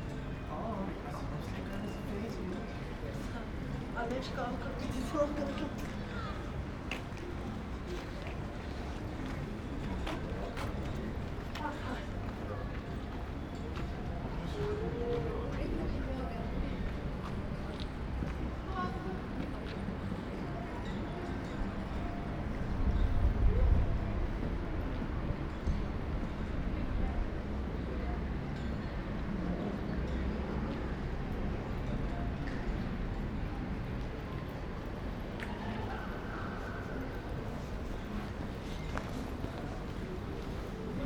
Maribor, Trg Leona Stuklja - late afternoon ambience
place revisited, nothing much happens...
(Sony PCM D50, Primo EM172)
Maribor, Slovenia, 30 March 2017, 18:20